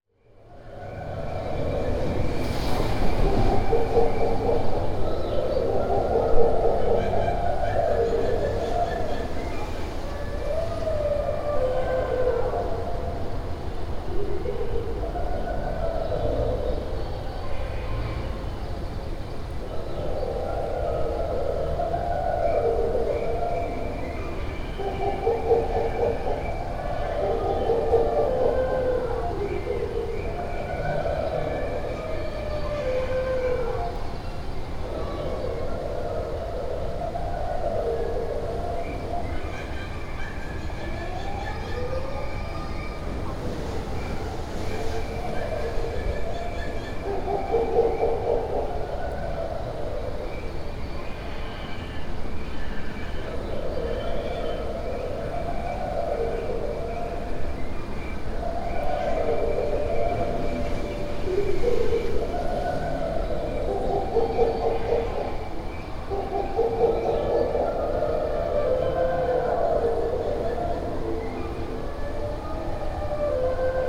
Aquapark, Kraków, Poland - (112 BI) Unexpectedly spooky sound desing
Binaural recording made from outside of the building, below the water slides. Contains an unexpectedly spooky animal sound design.
Recorded with Soundman OKM on Sony PCM D100
województwo małopolskie, Polska, 17 April 2017